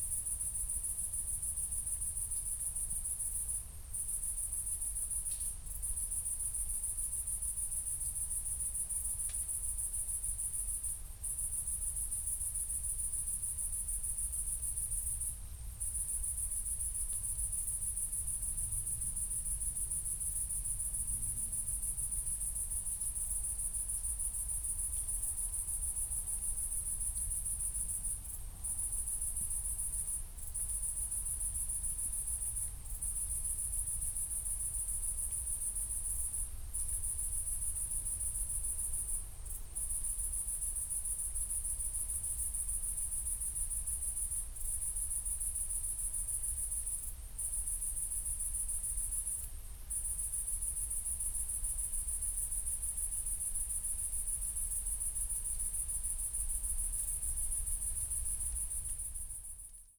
she accompanied us all the time, but when we wanted to record her, she went silent. A cricket instead
(Sony PCM D50, Primo EM172)